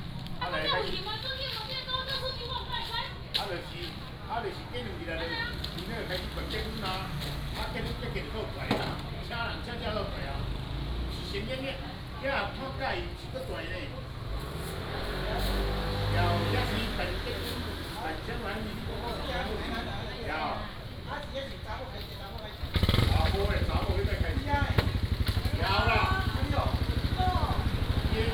本福村, Hsiao Liouciou Island - in front of the temple
In the square in front of the temple, Fried chicken shop